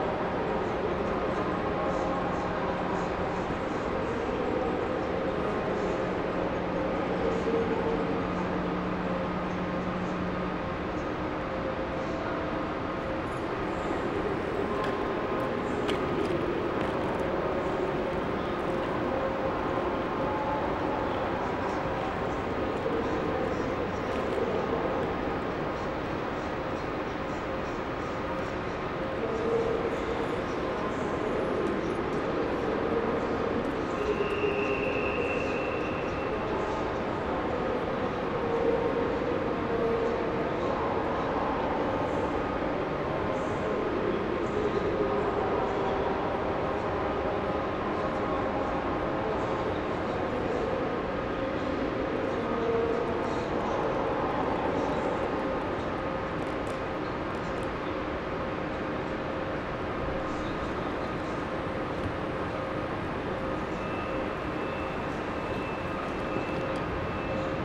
Trainstation, Flughafen, Squaire, Frankfurt am Main, Deutschland - Empty trainstation with some voices
In this recording a man is asking for money: Haben Sie vielleicht an Finanzen, was nur durch den Tag helfen könnte. And later: Alles ein bischen haarig so seit Corona. Na Guten Tag. Begging is forbidden at German train stations. The same guy will later be thrown out of Terminal 1 (also recorded, hear there...)
Hessen, Deutschland, 24 April 2020